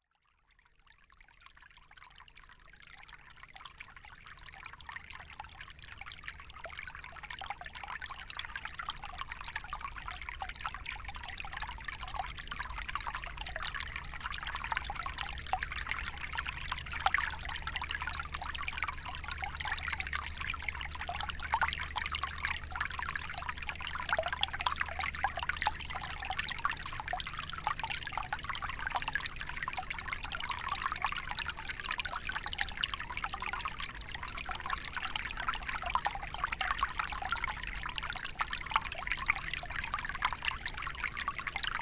Source-Seine, France - Seine stream
The Seine river is 777,6 km long. This is here the sound of the river when it's still a very small stream, flowing into the mint plants. The river is near to be impossible to see, as there's a lot of vegetation.